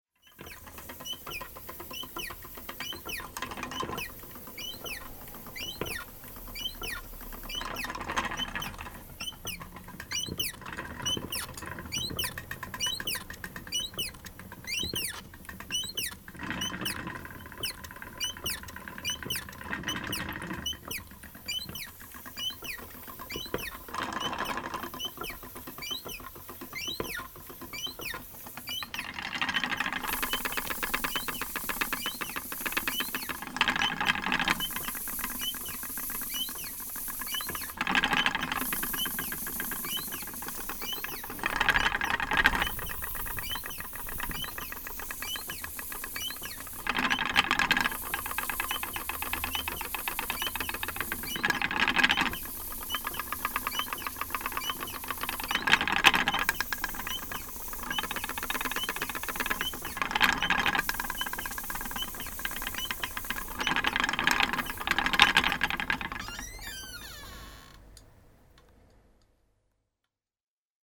London Borough of Tower Hamlets, Greater London, UK - Rachael's squeaky wheel
Not sure about exact date or time but it was during May 2008 when I visited Rachael in her shop - Prick Your Finger - and recorded both her squeaky ballwinder (for winding balls of yarn) and her spinning wheel. This is the wheel.
5 May 2008, 13:00